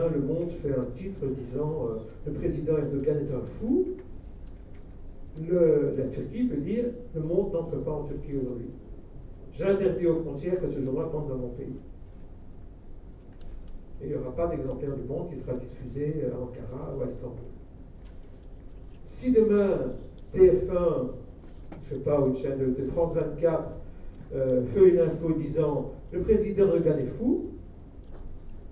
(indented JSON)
{
  "title": "Centre, Ottignies-Louvain-la-Neuve, Belgique - A course of medias",
  "date": "2016-03-13 11:45:00",
  "description": "In the very big Jacques Moelaert auditoire, a course about medias.",
  "latitude": "50.67",
  "longitude": "4.61",
  "altitude": "115",
  "timezone": "Europe/Brussels"
}